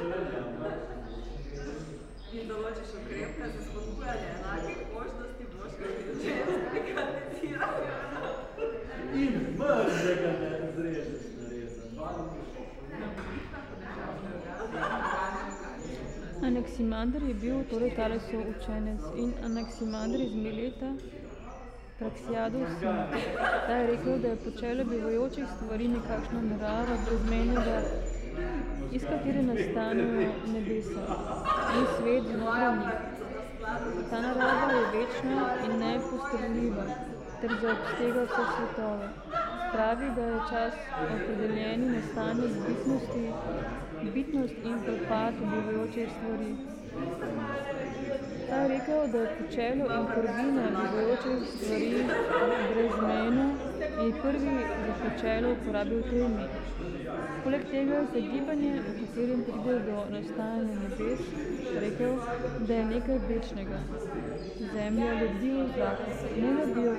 Secret listening to Eurydice, Celje, Slovenia - Public reading 8
sonic fragment from 45m59s till 52m15s
Vzhodna Slovenija, Slovenija